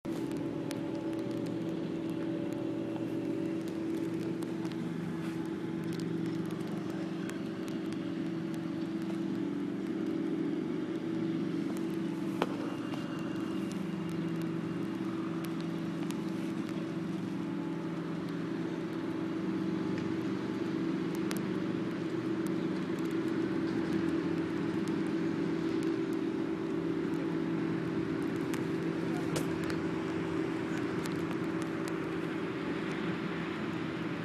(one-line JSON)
{"title": "Lungotevere di Pietra Papa, 61", "date": "2011-03-07 10:14:00", "description": "river and traffic", "latitude": "41.86", "longitude": "12.47", "altitude": "12", "timezone": "Europe/Rome"}